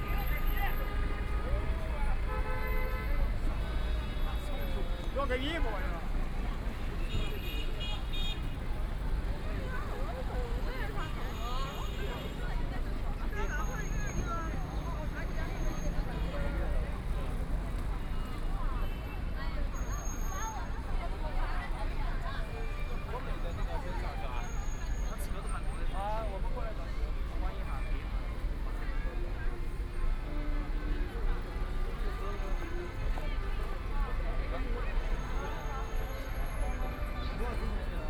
SEE UOMO, Changning District - the shopping mall
From the street to go into the shopping mall, Binaural recording, Zoom H6+ Soundman OKM II
Shanghai, China, 23 November 2013